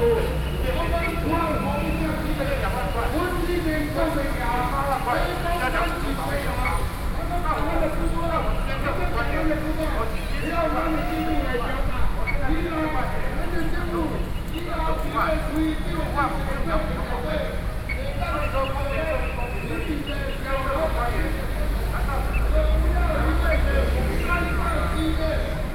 {
  "title": "Sec., Zhongxiao W. Rd., Zhongzheng Dist., Taipei City - Labor protest",
  "date": "2012-10-28 14:44:00",
  "latitude": "25.05",
  "longitude": "121.51",
  "altitude": "14",
  "timezone": "Asia/Taipei"
}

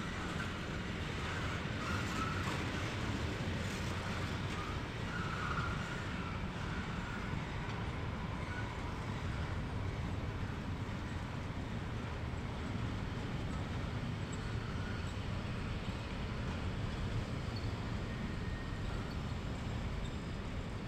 {
  "title": "Rijeka, Croatia, Railway Station, Composition - Passing",
  "date": "2008-07-23 21:56:00",
  "latitude": "45.33",
  "longitude": "14.43",
  "altitude": "5",
  "timezone": "Europe/Zagreb"
}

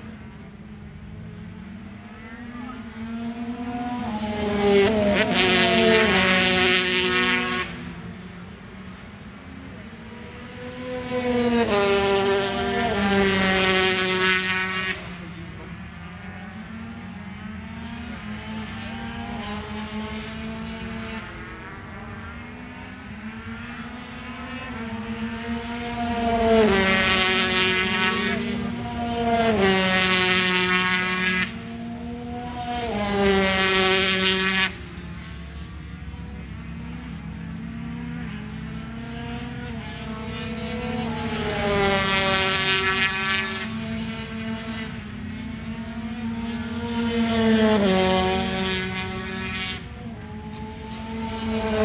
{
  "title": "Unnamed Road, Derby, UK - British Motorcycle Grand Prix 2004 ... 125 free practice ... contd ...",
  "date": "2004-07-23 09:30:00",
  "description": "British Motorcycle Grand Prix 2004 ... 125 free practice ... contd ... one point stereo mic to minidisk ... date correct ... time optional ...",
  "latitude": "52.83",
  "longitude": "-1.37",
  "altitude": "74",
  "timezone": "Europe/London"
}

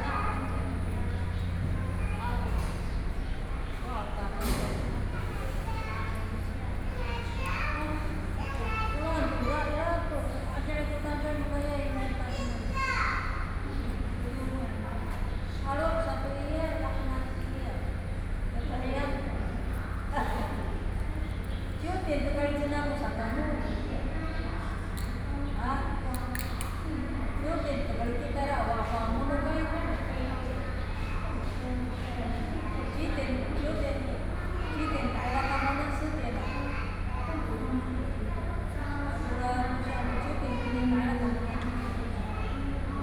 {
  "title": "Taimali Station, Taiwan - In the station lobby",
  "date": "2014-09-05 09:33:00",
  "description": "In the station lobby",
  "latitude": "22.62",
  "longitude": "121.01",
  "altitude": "57",
  "timezone": "Asia/Taipei"
}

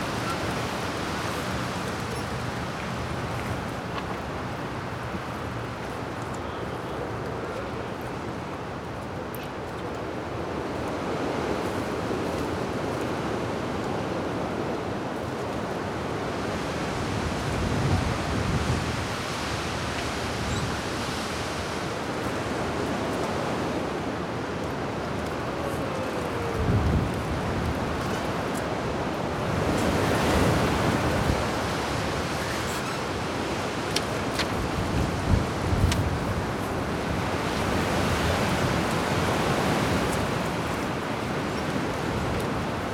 {
  "title": "Cyprès, collège de Saint-Estève, Pyrénées-Orientales, France - Vent dans les cyprès",
  "date": "2011-03-17 15:07:00",
  "description": "Preneuse de son : Aurélia",
  "latitude": "42.71",
  "longitude": "2.84",
  "altitude": "46",
  "timezone": "Europe/Paris"
}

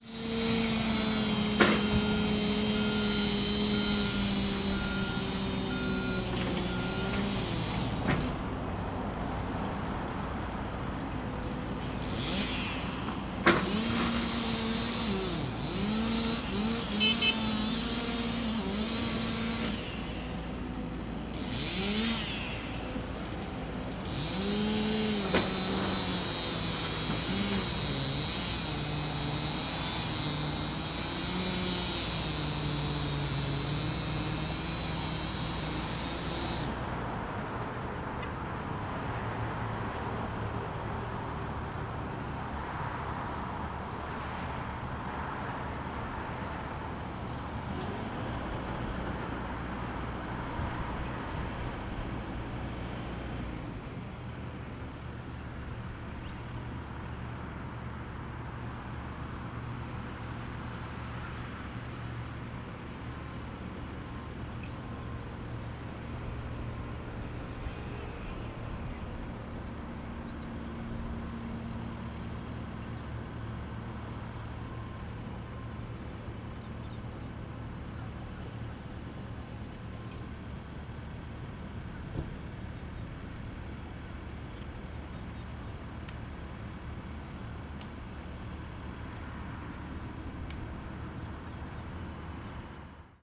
{
  "title": "Coquina Beach, Bradenton Beach, Florida, USA - Coquina Beach Parking Lot",
  "date": "2021-03-26 08:53:00",
  "description": "Workers clearing trees in parking lot at Coquina Beach.",
  "latitude": "27.45",
  "longitude": "-82.69",
  "altitude": "9",
  "timezone": "America/New_York"
}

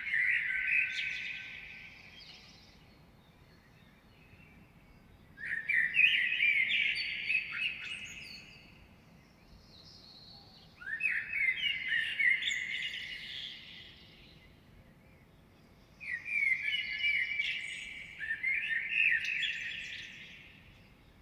{
  "title": "Oświecenia neighbourhood at dawn - Kraków, Polska - (636) AB Common Blackbird at dawn",
  "date": "2020-05-15 04:00:00",
  "description": "Wide (85cm) AB stereo recording made from a balcony. No processing added - all the echos and reverberance are natural and comes from concrete reflections.\nSennheiser MKH 8020, Sound Devices MixPre6 II",
  "latitude": "50.09",
  "longitude": "19.99",
  "altitude": "253",
  "timezone": "Europe/Warsaw"
}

